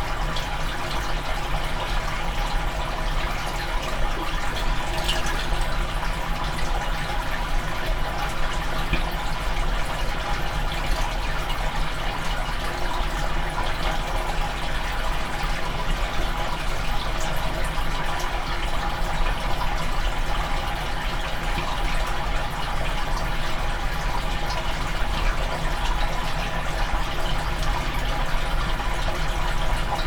Drava river, Melje, Maribor - shaft